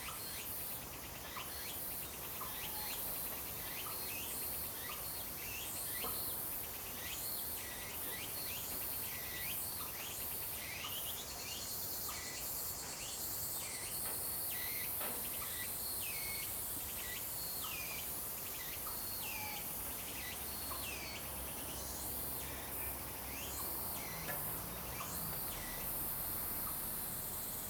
Birds singing, Cicadas cry, Frog calls
Zoom H2n MS+XY